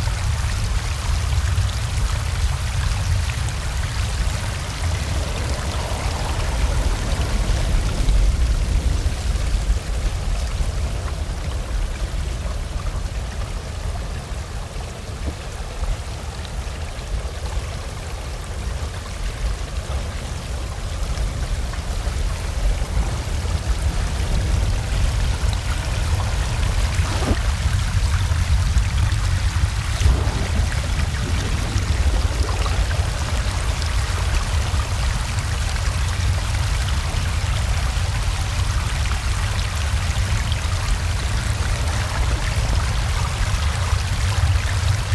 passage sous la cascade du troue noir avec un hydrophone